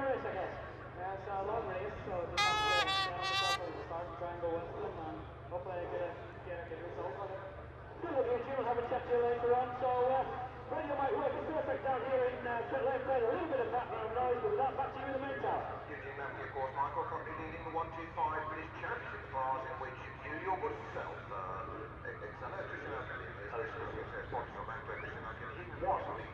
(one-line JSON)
{"title": "Unnamed Road, Derby, UK - British Motorcycle Grand Prix 2004 ... 125 ...", "date": "2002-07-24 13:15:00", "description": "British Motorcycle Grand Prix 2004 ... 125 qualifying ... one point stereo mic to mini-disk ...", "latitude": "52.83", "longitude": "-1.37", "altitude": "74", "timezone": "Europe/London"}